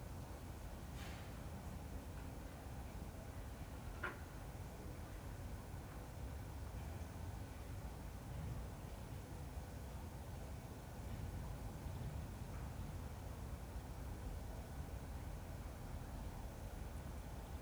Hiddenseer Str., Berlin, Germany - The Hinterhof from my 3rd floor window. Tuesday, 4 days after Covid-19 restrictions
More activity today. The sonic events are very musically spaced to my ear.